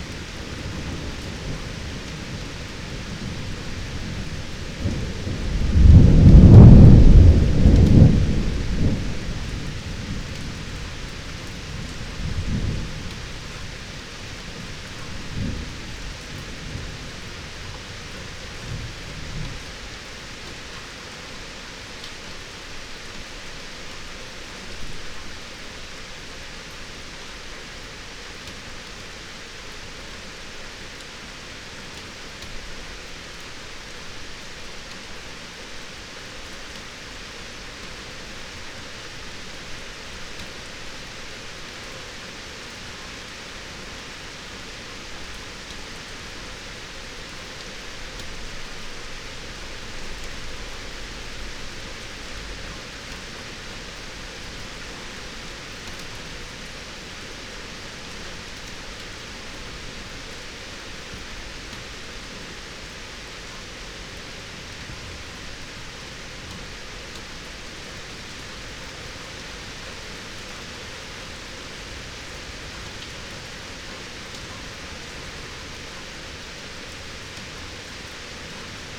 Berlin Bürknerstr., backyard window - Hinterhof / backyard ambience /w thunderstorm
11:25 Berlin Bürknerstr., backyard window, short early autumn thunderstorm and rain
(remote microphone: AOM5024HDR | RasPi Zero /w IQAudio Zero | 4G modem
Berlin, Germany, 29 September